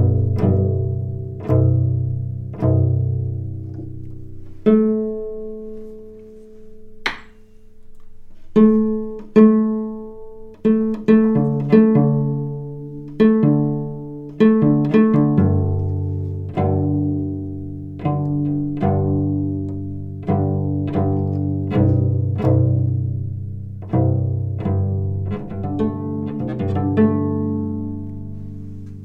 Umeå. Violin makers workshop.
Tuning a cello
26 February 2011, 14:18